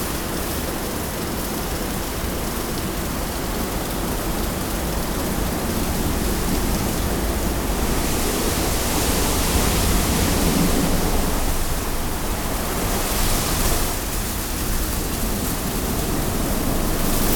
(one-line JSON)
{"title": "refrath, lustheide, tankstelle, autowaschanlage", "date": "2008-06-20 10:16:00", "description": "soundmap nrw/ sound in public spaces - in & outdoor nearfield recordings", "latitude": "50.95", "longitude": "7.11", "altitude": "68", "timezone": "Europe/Berlin"}